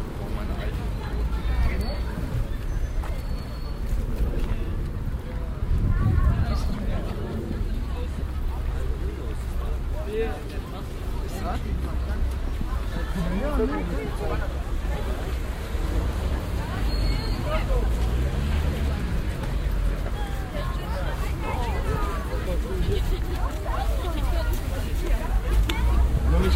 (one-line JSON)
{"title": "hilden, schulzentrum", "date": "2008-04-19 10:44:00", "description": "schulgebäude und schueler mittags, gang zur bushaltestelle\nproject: :resonanzen - neanderland soundmap nrw: social ambiences/ listen to the people - in & outdoor nearfield recordings", "latitude": "51.17", "longitude": "6.93", "altitude": "56", "timezone": "Europe/Berlin"}